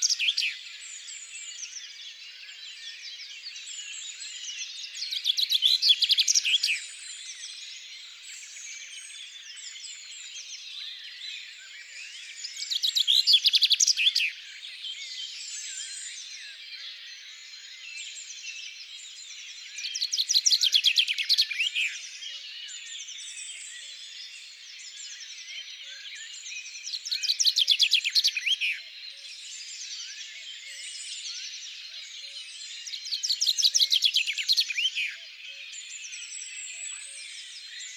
Unnamed Road, Colomieu, France - 19990502 0421 lac-arboriaz 00-21-47.055 00-45-12.666
19990502_0421_lac-arboriaz
tascam DAP1 (DAT), Micro Tellinga, logiciel samplitude 5.1